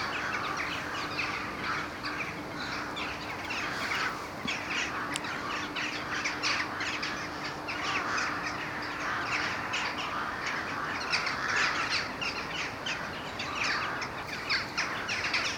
Vernou-la-Celle-sur-Seine, France - Crows and jackdaws war
Walking on the river Seine bank, we disturbed a huge crows and jackdaws group.
28 December 2016